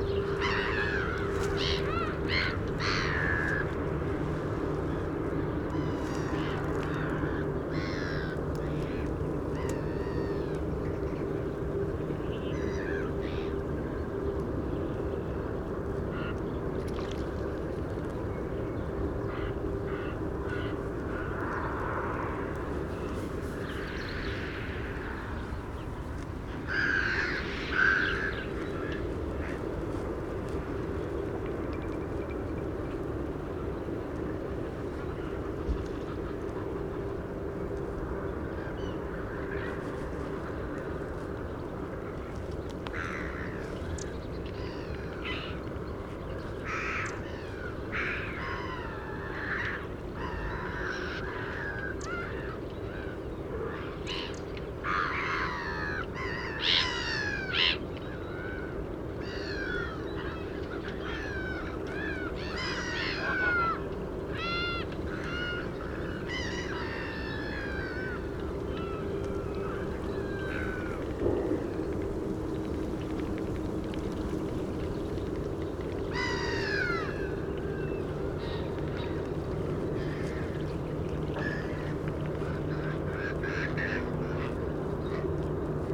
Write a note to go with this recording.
Birds on and around the water. Traffic from the Belgian side of the river, Church Bell.